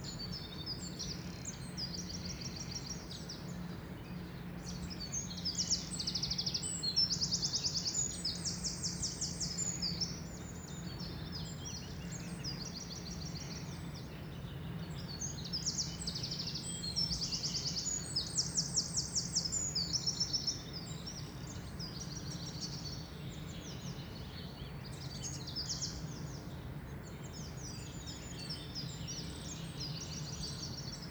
Birds heard include wren (loudest) chiffchaff and chaffinch.